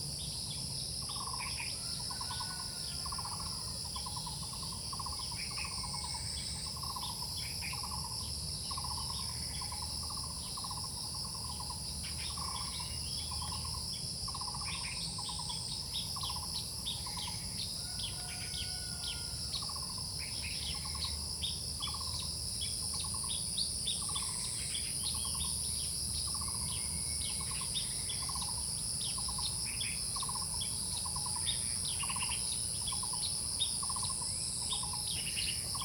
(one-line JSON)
{"title": "中路坑, 桃米里, 埔里鎮 - Bird and insects", "date": "2016-05-06 07:37:00", "description": "Sound of insects, Bird sounds, in the morning, Crowing sounds\nZoom H2n MS+XY", "latitude": "23.95", "longitude": "120.92", "altitude": "585", "timezone": "Asia/Taipei"}